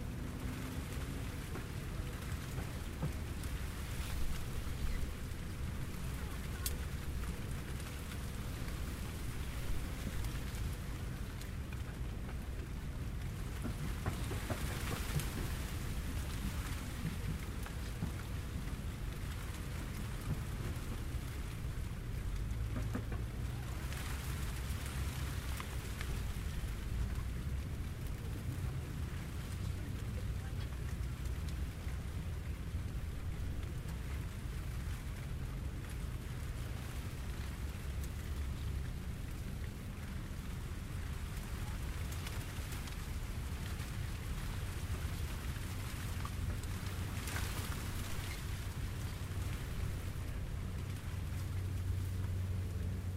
Birkenhead, North Shore, Long grass in wind
Long grass whipping in wind